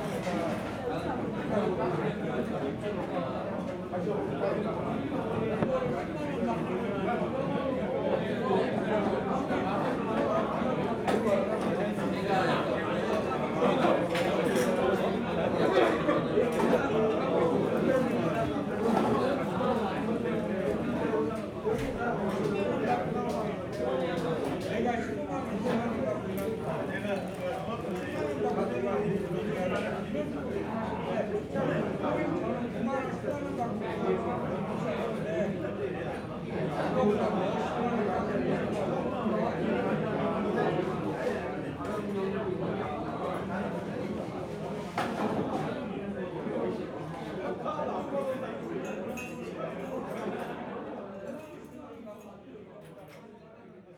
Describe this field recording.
Yangjae Dakjip(chicken center), interior noise, people eating & drinking, 양재닭집, 내부 소음